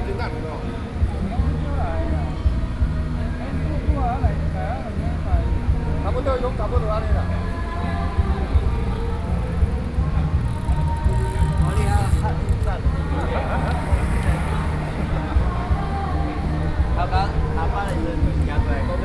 Bali Dist., New Taipei City, Taiwan - Under the bridge
Under the bridge, singing
Sony PCM D50